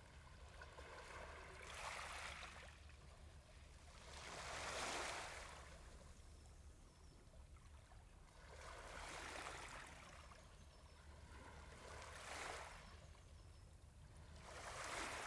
Torbay, UK - Calm Waves
Churchston Cove in Brixham. Calm waves recorded with a Tascam DR100 and DPA4060 microphones.
Brixham, Torbay, UK, 9 March 2015, 10:30